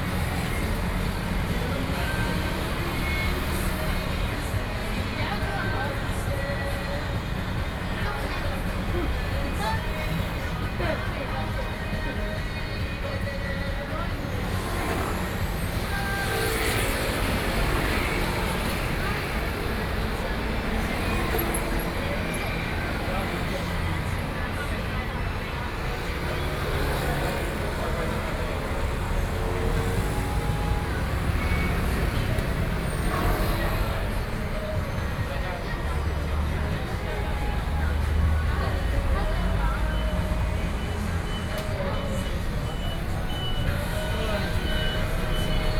Taoyuan - Soundwalk
Street in the Station area, Sony PCM D50 + Soundman OKM II
Taoyuan City, Taoyuan County, Taiwan, 12 August, ~13:00